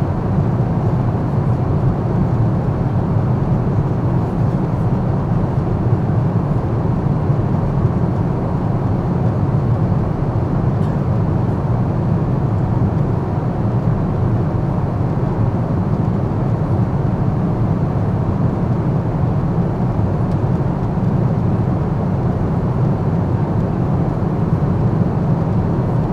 somewhere above Lake Baikal - constant noise, listening silent spaces below
whiteness with no end, thin sun line at the horizon, thousands beautifully curved river lines